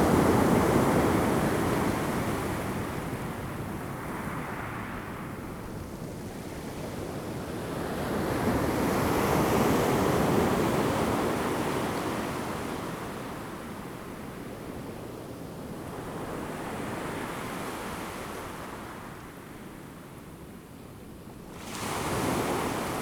Taitung City, Taiwan - Sound of the waves
Sound of the waves, The weather is very hot
Zoom H2n MS+XY
Taitung City, 大南二號堤防